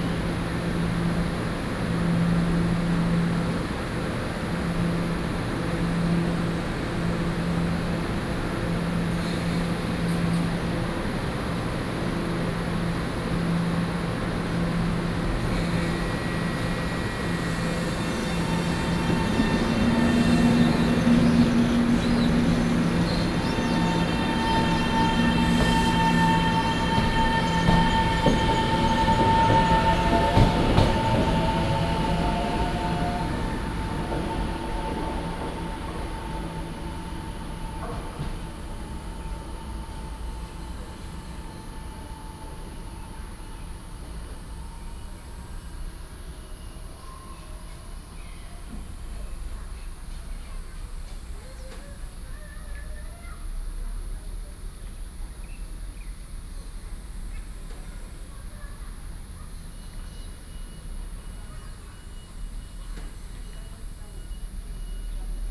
Ln., Jixiang St., Shulin Dist., New Taipei City - Traveling by train
Traveling by train, traffic sound
Sony PCM D50+ Soundman OKM II